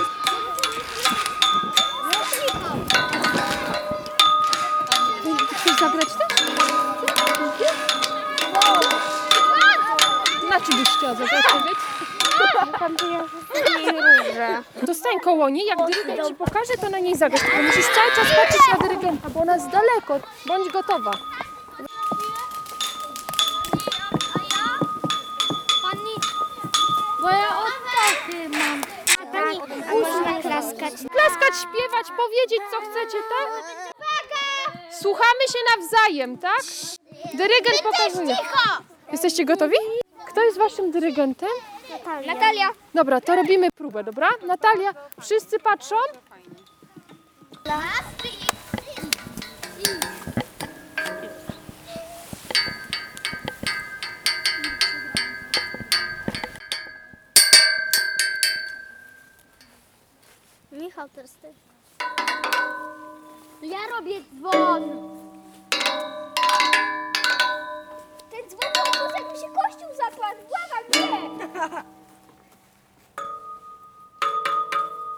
Wyspa Sobieszewska, Gdańsk, Poland - Wyspa Gra !
Nagranie zrealizowane przez Kamilę Staśko-Mazur podczas warsztatów w Szkole nr.25. Projekt Ucho w Wodzie
2 October 2015